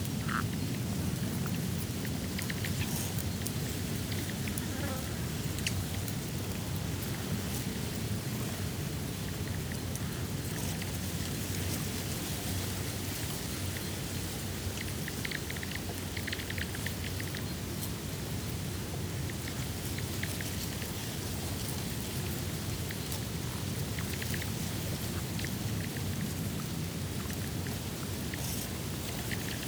새만금_Saemangeum former tidal reedland...this area is now behind the Saemangeum sea-wall and as such has undergone rapid ecological transformation...adjacent former reedland is now in agricultural use...the whole area is under development and transformation